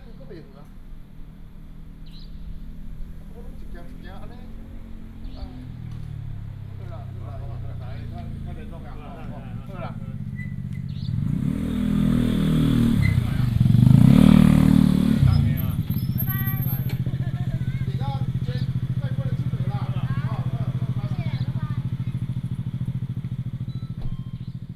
Square outside the convenience store, Bird call, Traffic sound
Binaural recordings, Sony PCM D100+ Soundman OKM II